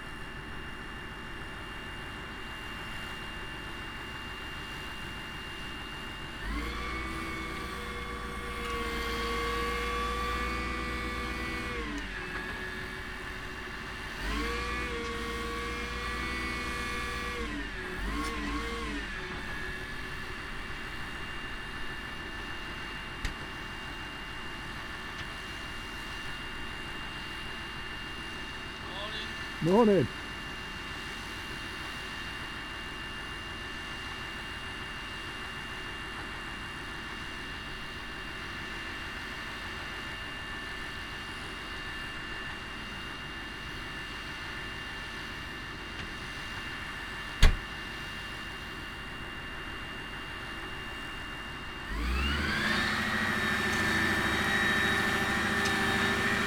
Green Ln, Malton, UK - dropping a plough ...
caterpillar tractor setting up a plough before moving off ... dpa 4060s in parabolic to mixpre3 ... bird song ... territorial call ... from ... red-legged partridge ... yellowhammer ... chaffinch ...
Yorkshire and the Humber, England, United Kingdom, 21 March 2020